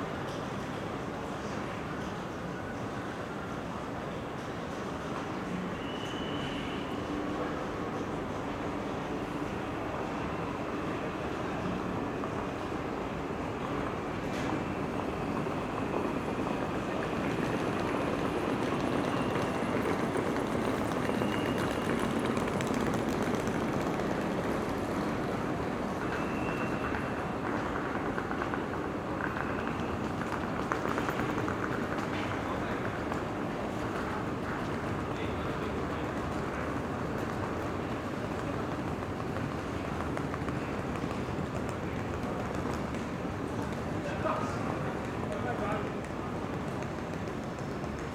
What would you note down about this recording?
Hamburg main station - listening to the trollley cases and passers-by. [I used Tascam DR-07 for recording]